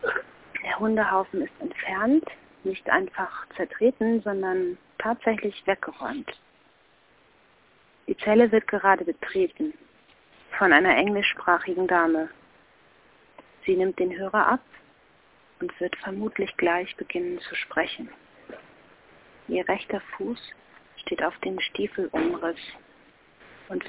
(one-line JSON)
{"title": "Telefonzelle, Dieffenbachstraße - Ein echter Mensch 18.08.2007 15:33:03", "latitude": "52.49", "longitude": "13.42", "altitude": "42", "timezone": "GMT+1"}